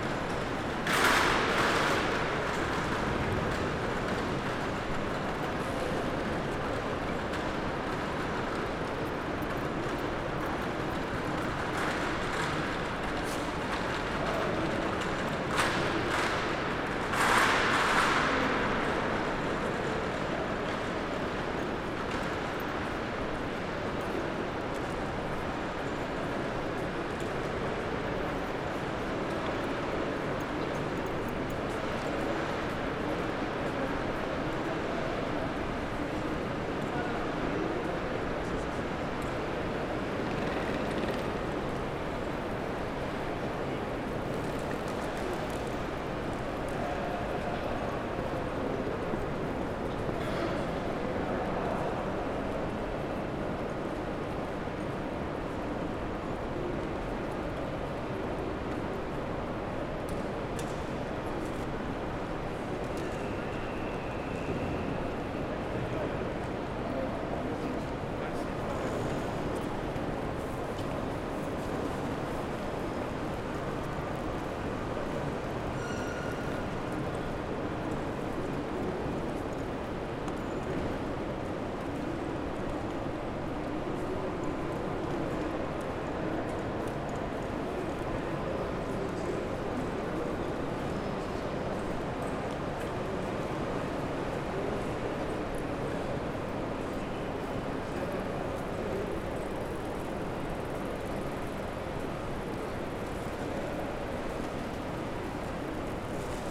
Frankfurt Hauptbahnhof 1 - Halle
This is the first of a series of recordings that document the change of sound in the station during the so called 'Corona Crisis'. It is unclear if it will become audible that there is less noise, less voices than normal. But at least it is a try to document this very special situation. This recording starts on the B-level, where drugs are dealt, the microphone walks to an escalator to the entrance hall. Voices, suitcases, birds.
Hessen, Deutschland, 21 March 2020